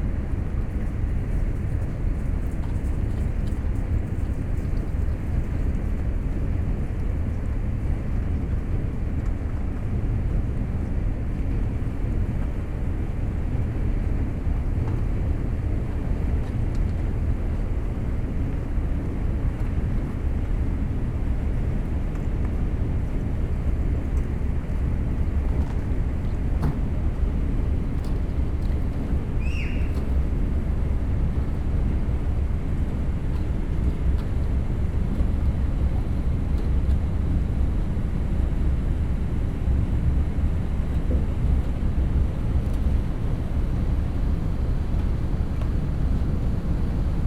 berlin, plänterwald: spreeufer - coal freighter breaking ice
Berlin Plänterwald, cold winter Sunday afternoon. a coal freighter on its way to the nearby heating plant breaks the ice on river spree, then continues the transport.
(Sony PCM D50, DPA4060)